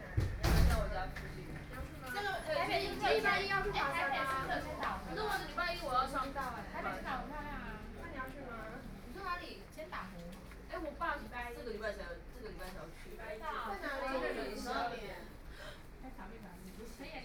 Da'an District, Taipei - chatting
A group of students chatting, Sony PCM D50 + Soundman OKM II
台北市 (Taipei City), 中華民國, May 2013